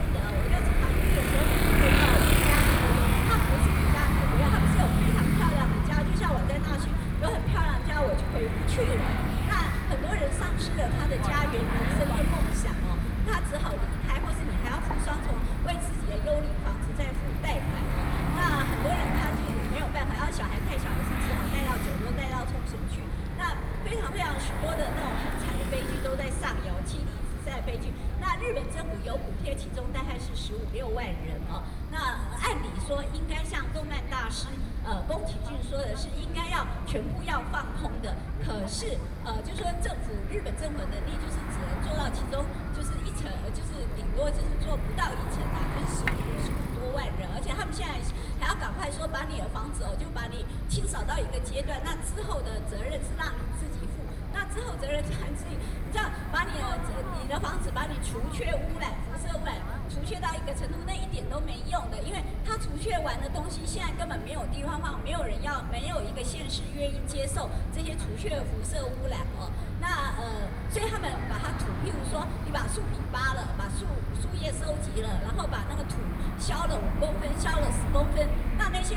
Freedom Plaza, Taipei City - Opposition to nuclear power
Famous writer, speech, Opposition to nuclear power
Binaural recordings
9 August 2013, ~21:00